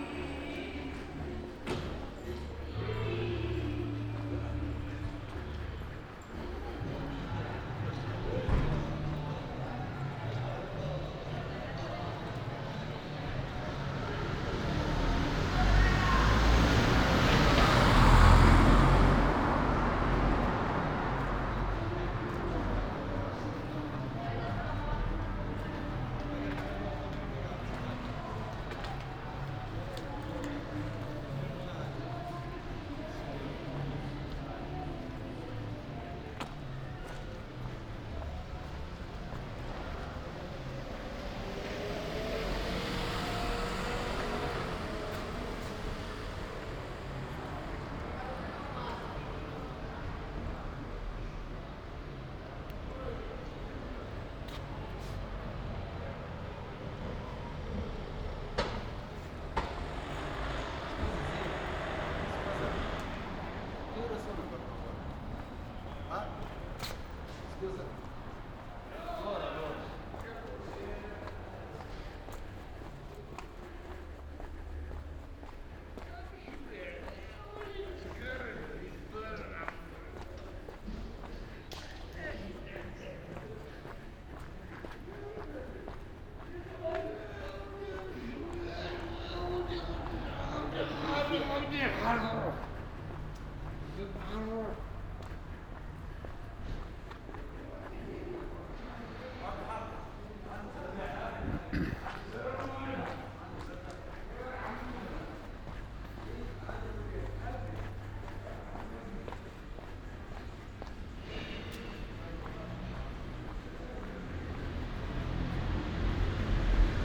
Ascolto il tuo cuore, città. I listen to your heart, city. Chapter CLIX - No fever Saturday night in the time of COVID19: Soundwalk.
"No fever Saturday night in the time of COVID19": Soundwalk.
Chapter CLIX of Ascolto il tuo cuore, città. I listen to your heart, city
Saturday, February 27th, 2021. San Salvario district Turin, walking round San Salvario district, just after my first COVID-19 vaccine.
Three months and twenty days of new restrictive disposition due to the epidemic of COVID19.
Start at 9:54 p.m. end at 10:19 p.m. duration of recording 24’48”
The entire path is associated with a synchronized GPS track recorded in the (kmz, kml, gpx) files downloadable here:
Piemonte, Italia, 27 February 2021, 21:54